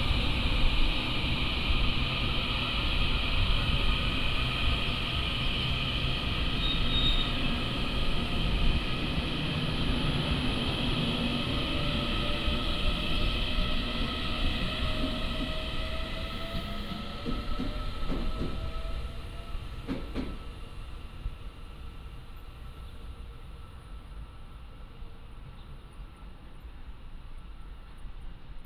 At the station platform, Train arrives and leaves